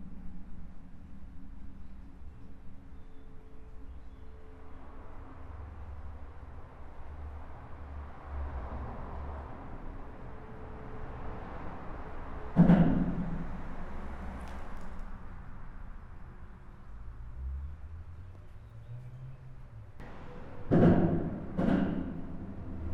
Inside an highway bridge. This is the sound of the expansion joint. I'm just below and cars are driving fast.
Belgium, 11 April 2016